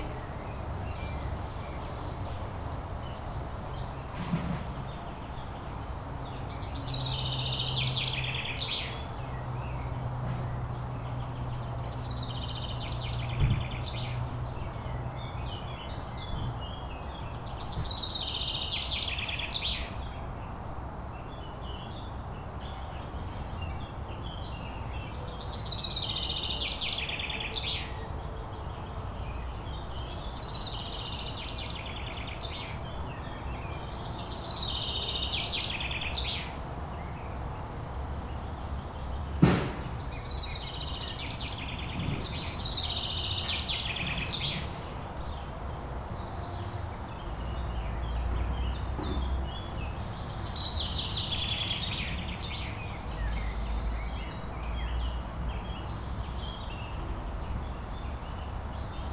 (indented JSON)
{
  "title": "wermelskirchen, hünger: friedhof - the city, the country & me: cemetery",
  "date": "2011-05-06 10:27:00",
  "description": "singing birds, a gardener and in the background the sound of the motorway a1\nthe city, the country & me: may 6, 2011",
  "latitude": "51.14",
  "longitude": "7.18",
  "altitude": "282",
  "timezone": "Europe/Berlin"
}